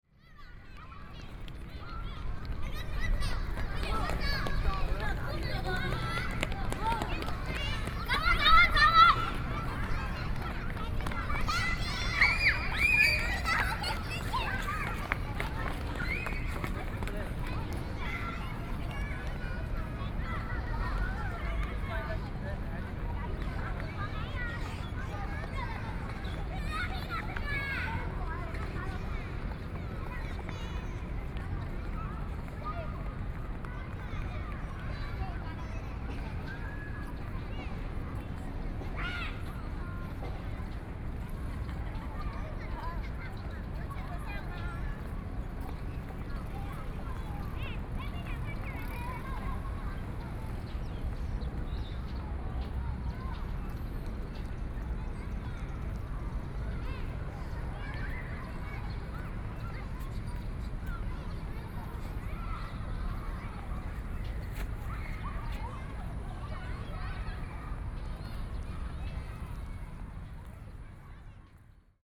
National Chiang Kai-shek Memorial Hall, Taipei - Child
Child, Square, Sony PCM D50 + Soundman OKM II